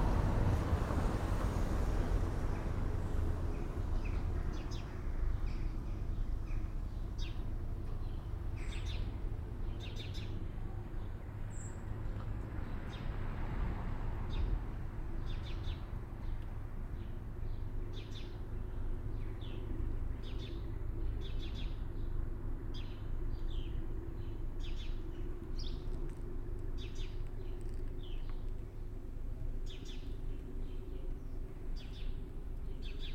Quiet in a normally very busy village. Geese and traffic, boats in background. Soundfield Microphone, Stereo decode.
Gelderland, Nederland, January 2021